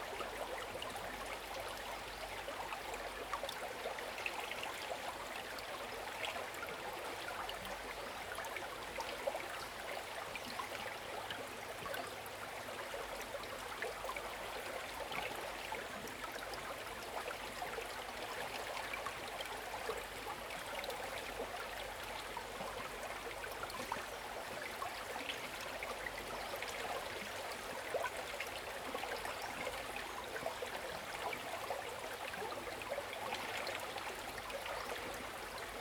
{"title": "上種瓜坑, 埔里鎮成功里 - Streams and Drop", "date": "2016-04-28 09:55:00", "description": "Sound of water, Small streams, Streams and Drop\nZoom H2n MS+XY", "latitude": "23.96", "longitude": "120.89", "altitude": "449", "timezone": "Asia/Taipei"}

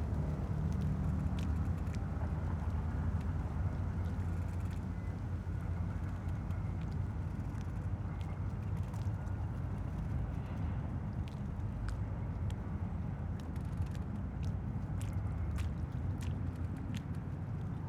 {"title": "Berlin, Plänterwald, Spree - morning soundscape", "date": "2012-03-05 08:30:00", "description": "monday morning industrial soundscape, plenty of different sounds, water, wind, a squeeking tree, sounds of work from the other side of the river. it's very windy this morning.\n(tech note: SD702, rode NT1a 60cm AB)", "latitude": "52.49", "longitude": "13.49", "altitude": "23", "timezone": "Europe/Berlin"}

